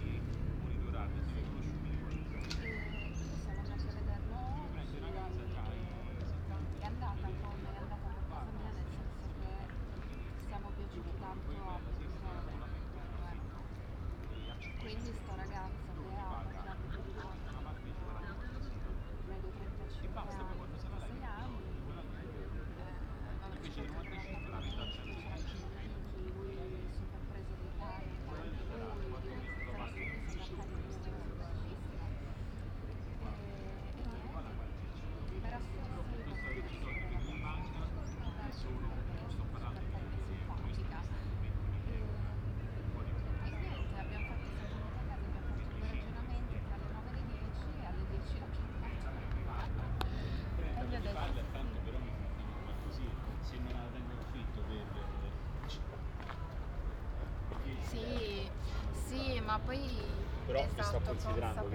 Ascolto il tuo cuore, città. I listen to your heart, city. Chapter X - Valentino Park at sunset soundwalk and soundscape 14 months later in the time of COVID19: soundwalk & soundscape
"Valentino Park at sunset soundwalk and soundscape 14 months later in the time of COVID19": soundwalk & soundscape
Chapter CLXXI of Ascolto il tuo cuore, città. I listen to your heart, city
Friday, May 7th, 2021. San Salvario district Turin, to Valentino park and back, one year and fifty-eight days after emergency disposition due to the epidemic of COVID19.
Start at 8:16 p.m. end at 9:08 p.m. duration of recording 51’38”
Walking to a bench on the riverside where I stayed for about 10’, from 6:35 to 6:45 waiting for sunset at 8:41.
The entire path is associated with a synchronized GPS track recorded in the (kmz, kml, gpx) files downloadable here: